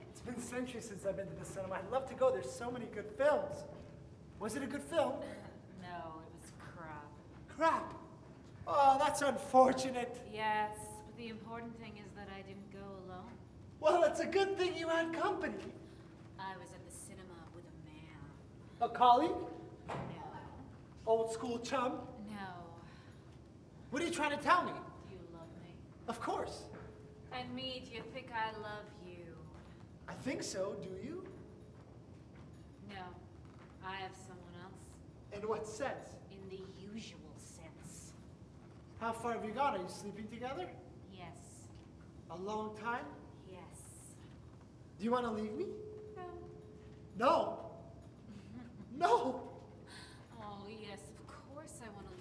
Montreal: Cazalet Theatre - Cazalet Theatre
equipment used: Audio Technica 853a
stereo recording of two actors rehearsing for their performance of Play n 27, put on by the concordia theatre department
QC, Canada, 3 May 2009